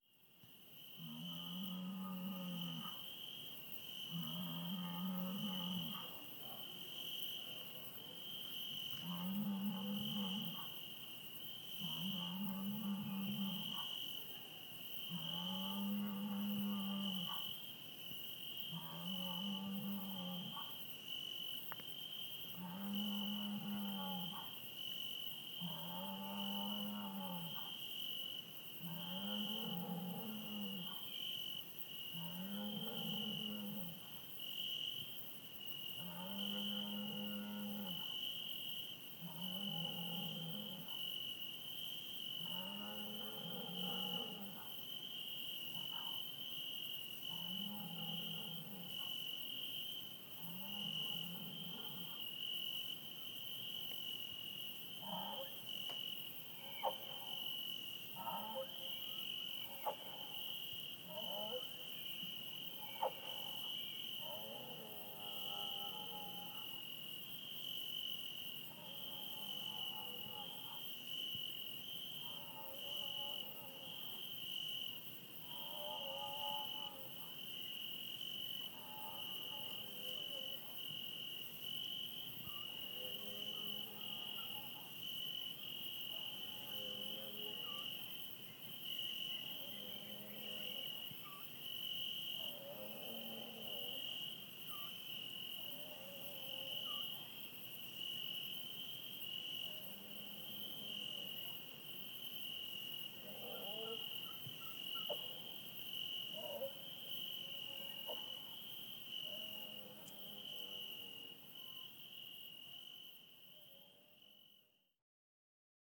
Primary School, Pokrovka, Mykolaivska oblast, Ukraina - Roaring cow at night
Roaring cow at night
Suavas Lewy
Pokrovka, Mykolaivska oblast, Ukraine, 28 August 2015